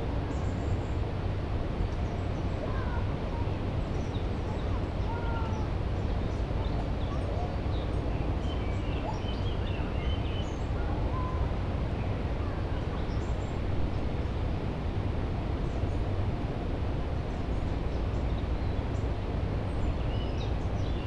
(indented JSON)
{
  "title": "Auenheim, Niederaußem power plant - ambience near cooling towers",
  "date": "2011-05-25 20:05:00",
  "description": "hum and noise from inside the the power plant and cooling towers",
  "latitude": "50.99",
  "longitude": "6.66",
  "altitude": "81",
  "timezone": "Europe/Berlin"
}